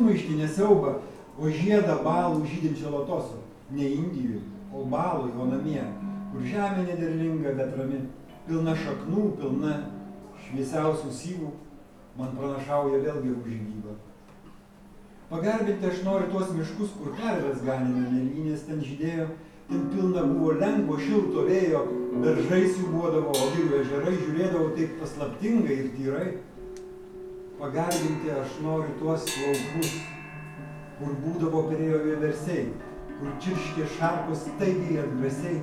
{"title": "Leliūnai, Lithuania, at the opening event of the Art Center", "date": "2014-05-31 21:30:00", "latitude": "55.48", "longitude": "25.39", "altitude": "161", "timezone": "Europe/Vilnius"}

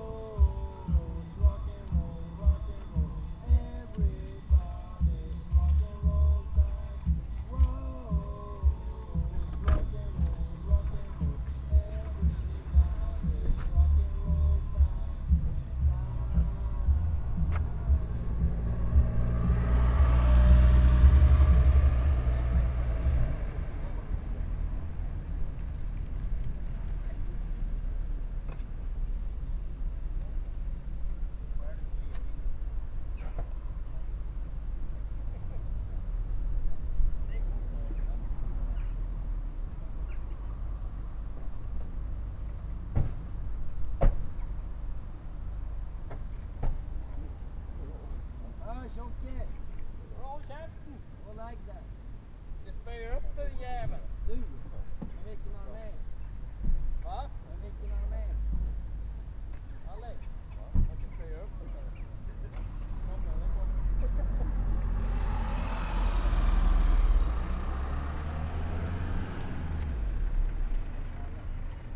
{"title": "Sollefteå, Sverige - Youngsters on the parking lot", "date": "2012-07-18 20:10:00", "description": "On the World Listening Day of 2012 - 18th july 2012. From a soundwalk in Sollefteå, Sweden. Youngsters at the parking lot plays music and sings along with the car stereo, shouting at some friends, starts the \"EPA traktor\" and moped at Coop Konsum shop in Sollefteå. WLD", "latitude": "63.16", "longitude": "17.28", "altitude": "24", "timezone": "Europe/Stockholm"}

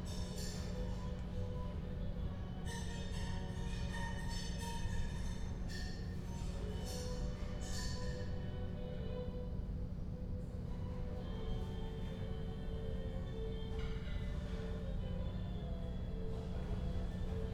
{"title": "inner yard window, Piazza Cornelia Romana, Trieste, Italy - sounds around noon", "date": "2013-09-08 11:50:00", "description": "sounds from a kitchen, classical music from a radio", "latitude": "45.65", "longitude": "13.77", "altitude": "24", "timezone": "Europe/Rome"}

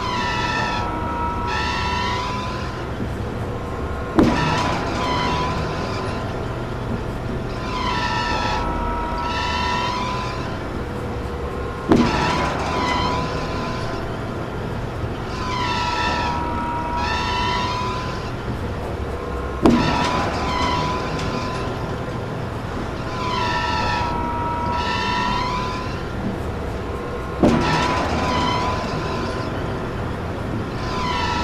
{"title": "Signal Hill oil field pumpjacks", "date": "2009-03-08 20:56:00", "latitude": "33.81", "longitude": "-118.18", "altitude": "21", "timezone": "GMT+1"}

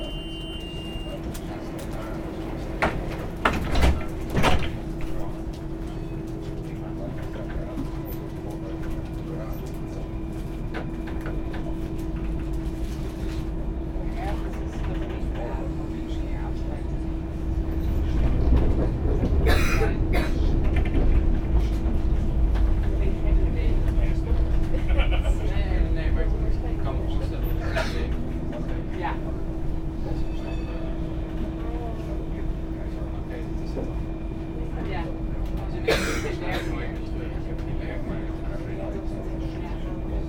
{"title": "Den Haag, Nederlands - Den Haag tramway", "date": "2019-03-30 11:45:00", "description": "Ride into the Den Haag tramway, from Loosduinen, Laan van Meerdervoort, Heliotrooplaan stop on the Line 3, to Elandstraat, Den Haag centrum.", "latitude": "52.06", "longitude": "4.23", "altitude": "4", "timezone": "Europe/Amsterdam"}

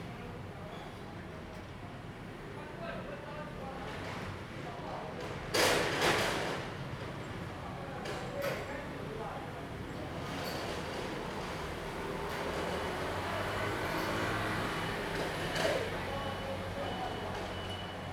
{"title": "大仁街, 淡水區, New Taipei City - Disassembly", "date": "2015-06-23 09:53:00", "description": "Removing shed\nZoom H2n MS+XY", "latitude": "25.18", "longitude": "121.44", "altitude": "45", "timezone": "Asia/Taipei"}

